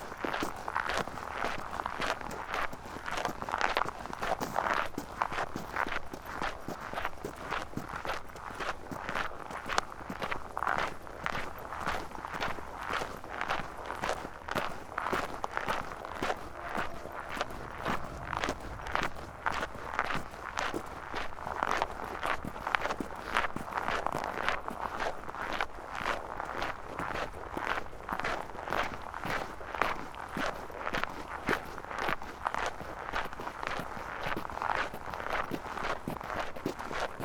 Plänterwald, Berlin - walk on snow
Berlin, Plänterwald, walk on snow, cold Sunday late afternoon
(Sony PCM D50)